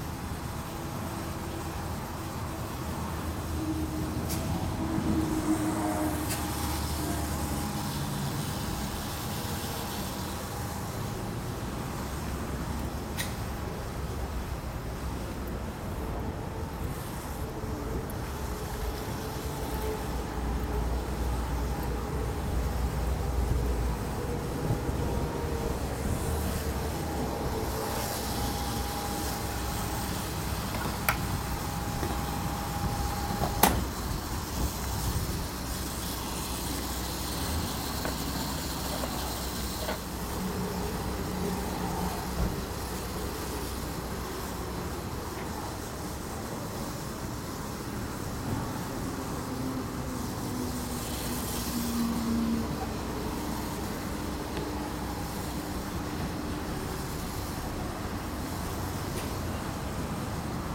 siegburg, motorway service area
recorded june 30th, 2008.
project: "hasenbrot - a private sound diary"